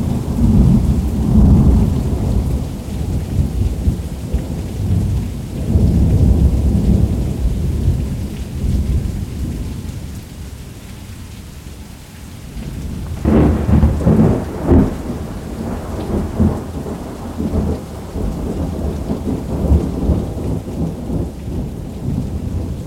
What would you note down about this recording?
Thunder and rain in south of France during summer, recorded from the balcony of my house. Recorded by an AB Setup with two B&K 4006 Omni microphones. On a 633 Sound Devices recorder. Sound Ref: FR-180812-3